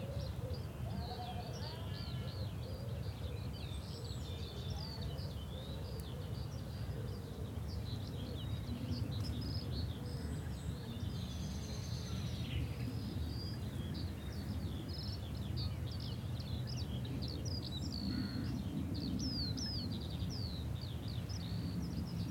Sheep field, Rushall farm, Bradfield, UK - sheep field recording
...a continuation of the earlier recording made in this beautiful, peaceful field of sheep and birds.
1 May, 08:51